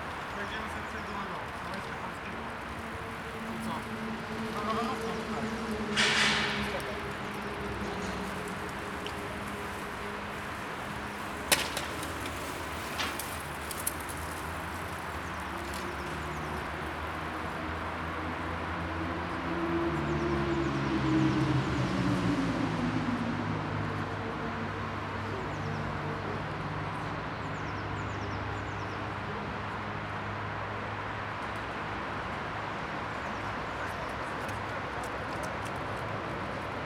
Poznan, Golencin district, Niestachowska expres way - underpass at Niestachowska
recorded under a flyover at Niestachowska street, one of the busiest express ways in Poznan. this underpass leads to Rusalka lake from the eastern parts of the city. plenty of people biking, walking and running towards the lake. continuous drone of the speeding cars above.